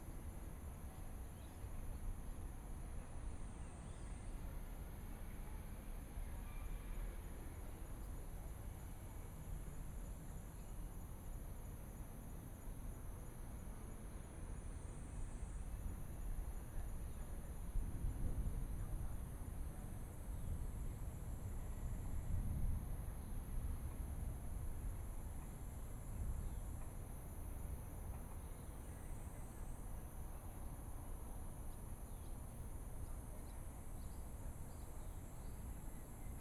In the woods, Sound of thunder, traffic sound